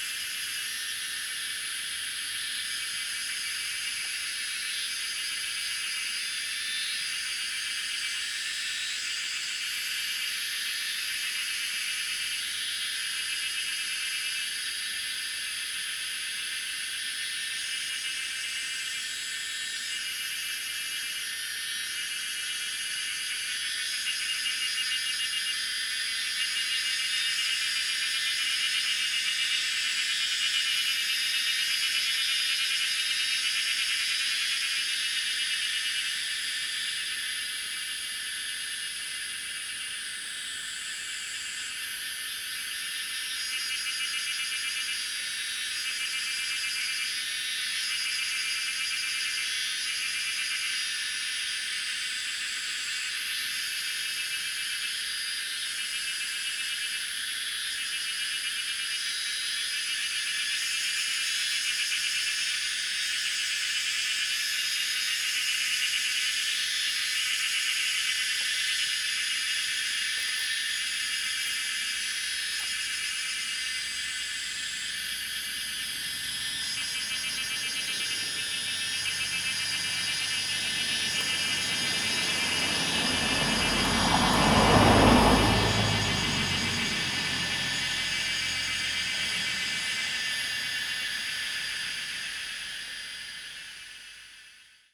Shuishang Ln., 桃米里, 南投縣 - Cicadas
Faced woods, Cicadas called
Zoom H2n MS+XY